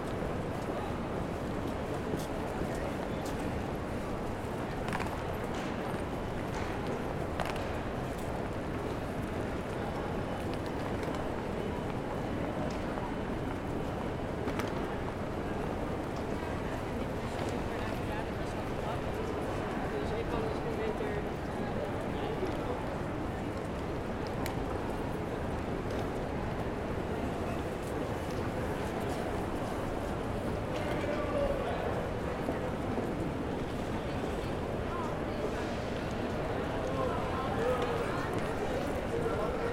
{
  "title": "Stationshal, Utrecht, Niederlande - utrecht main station atmosphere 2019",
  "date": "2019-04-10 17:40:00",
  "description": "Atmosphere in the main station hall of Utrecht. Steps, suitcases, voices, anouncements of the international train to Düsseldorf.\nRecorded with DR-44WL.",
  "latitude": "52.09",
  "longitude": "5.11",
  "altitude": "9",
  "timezone": "Europe/Amsterdam"
}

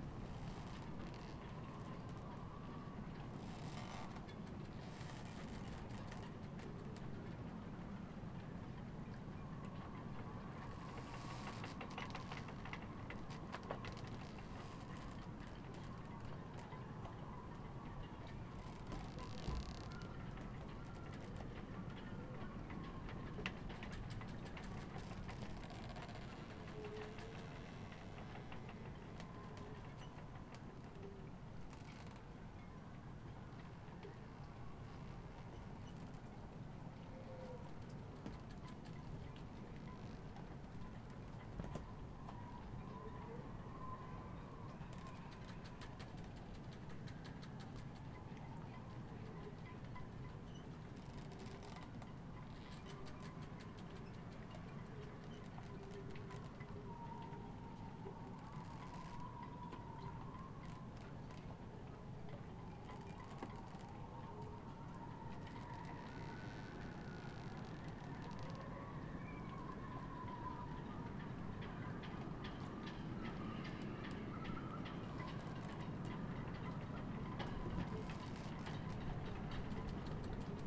{
  "title": "workum, het zool: marina, berth h - the city, the country & me: marina, aboard a sailing yacht",
  "date": "2009-07-24 02:07:00",
  "description": "creaking ropes, wind flaps the tarp\nthe city, the country & me: july 24, 2009",
  "latitude": "52.97",
  "longitude": "5.42",
  "altitude": "1",
  "timezone": "Europe/Berlin"
}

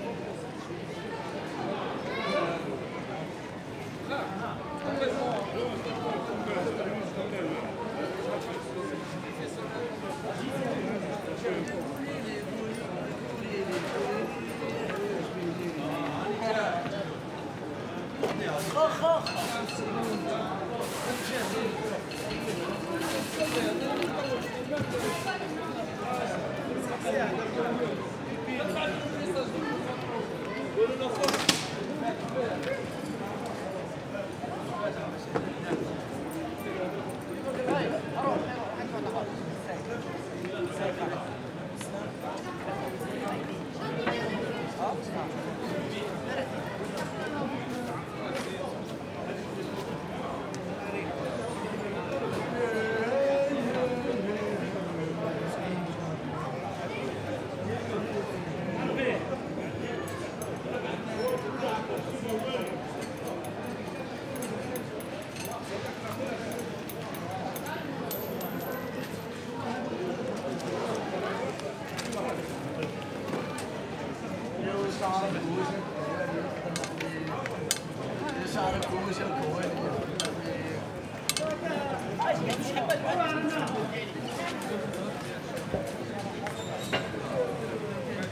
{
  "title": "Noailles, Marseille, Frankreich - Marseille, Rue de Feuillants - Improvised street market",
  "date": "2014-08-12 20:50:00",
  "description": "Marseille, Rue de Feuillants - Improvised street market.\n[Hi-MD-recorder Sony MZ-NH900, Beyerdynamic MCE 82]",
  "latitude": "43.30",
  "longitude": "5.38",
  "altitude": "26",
  "timezone": "Europe/Paris"
}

